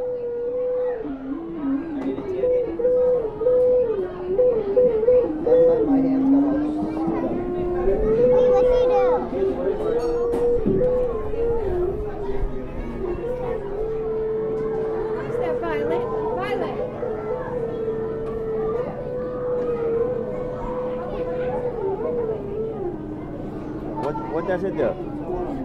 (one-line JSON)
{"title": "Maryland Science Center, Baltimore, MD, USA - The Sunken Hum Broadcast 166 - Sounds at the Science Center - 15 June 2013", "date": "2013-06-14 12:30:00", "description": "Messing with fun things at the Science Center.", "latitude": "39.28", "longitude": "-76.61", "altitude": "11", "timezone": "America/New_York"}